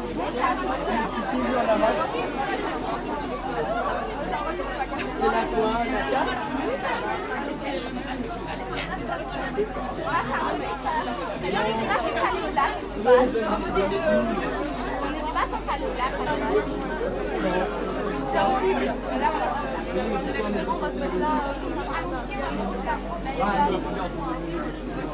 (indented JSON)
{
  "title": "L'Hocaille, Ottignies-Louvain-la-Neuve, Belgique - St-Jean-Baptist walk",
  "date": "2016-03-18 12:30:00",
  "description": "750 students of the St-Jean Baptist college went to see the film called \"Tomorrow\", about sustainable development. They walk back by feet, from Louvain-La-Neuve to Wavre (8 km). I follow them during a short time.",
  "latitude": "50.67",
  "longitude": "4.61",
  "altitude": "121",
  "timezone": "Europe/Brussels"
}